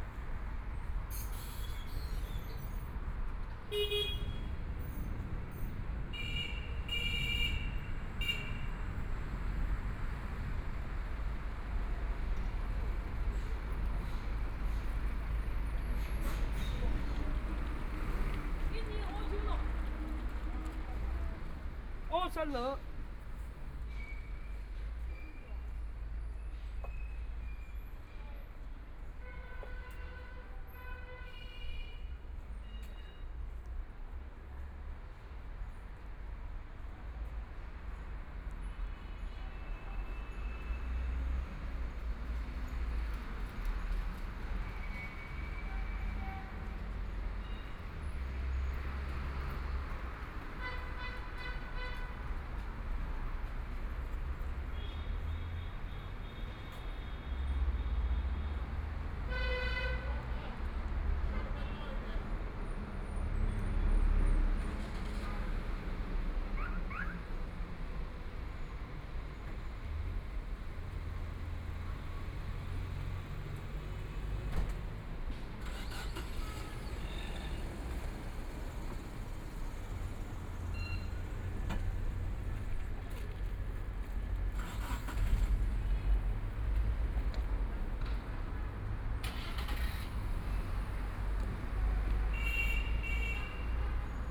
Kongjiang Road, Yangpu District - walking on the road
Walking on the street, various shops walking between residents, Traffic Sound, Binaural recording, Zoom H6+ Soundman OKM II